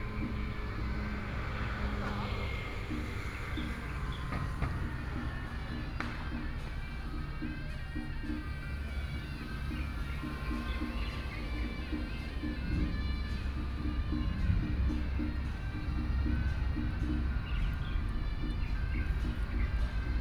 蘇澳鎮港邊里, Yilan County - Small village
In the square, Funeral, Hot weather, Traffic Sound, Birdsong sound, Small village, Garbage Truck
Yilan County, Taiwan, 28 July 2014